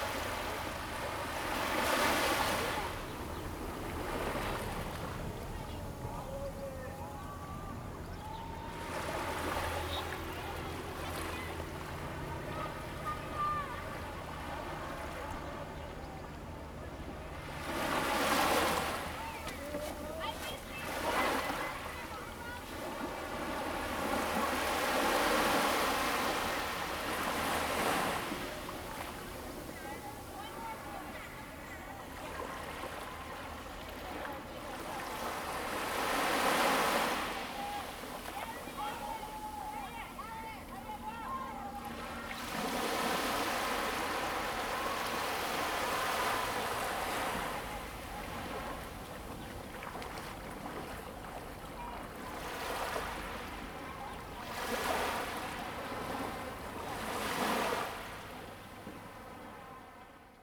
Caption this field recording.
sound of the waves, At the beach, Zoom H2n MS+XY +Sptial Audio